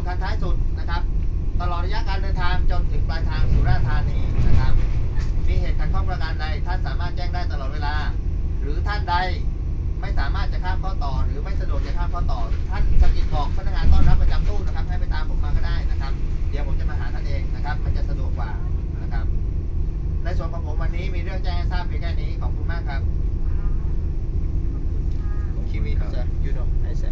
Wat Phong Benchaphat, Tambon Khao Noi, Amphoe Pran Buri, Chang Wat Prachuap Khiri Khan, Th - Zug nach Surathani Unterweisung
In the train from Bangkok to Surathani the conductor is explaning something in quite some length (5 min.?) directly (not via intercom) to the travelers. I the end his translation for me says: no smoking.